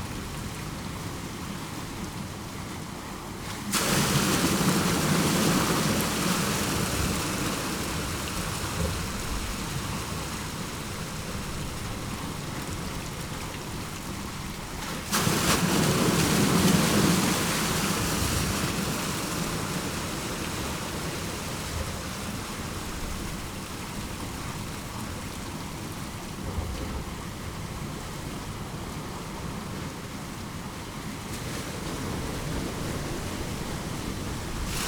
Litvínov, Czech Republic - Kopisty (the outside of the pipes)
Most Basin, North Bohemia
These sounds were recorded in the area of the former village of Kopisty. Kopisty was demolished (in the 70's) to make way for the expanding mines and petrochemical industries. There are many kilometers of pipes in the landscape. There is black liquid tar flowing from these pipes.
13 September 2015, 13:00